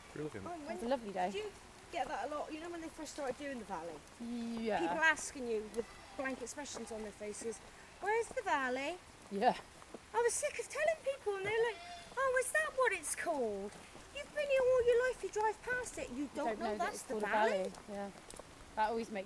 Efford Walk One: About planting up Efford Valley - About planting up Efford Valley
Plymouth, UK